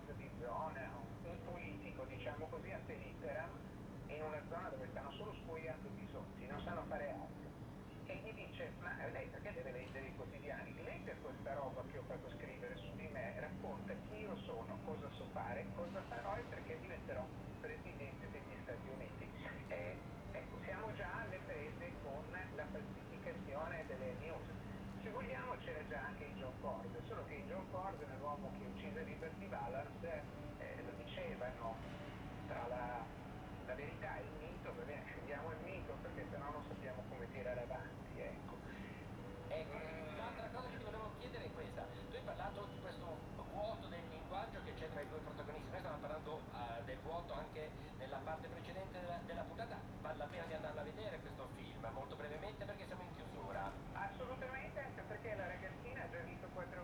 Ascolto il tuo cuore, città. I listen to your heart, city. Several chapters **SCROLL DOWN FOR ALL RECORDINGS** - Five p.m. terrace with RadioTre and Burn-Ya in the time of COVID19: soundscape.
"Five p.m. terrace with RadioTre and Burn-Ya in the time of COVID19": soundscape.
Chapter CLVIII of Ascolto il tuo cuore, città. I listen to your heart, city
Saturday, February 20th, 2021. Fixed position on an internal terrace at San Salvario district Turin; Burn-Ya (music instrument) and old transistor radio broadcast RAI RadioTre are in the background. More than three months and a half of new restrictive disposition due to the epidemic of COVID19.
Start at 4:18: p.m. end at 5: p.m. duration of recording ’”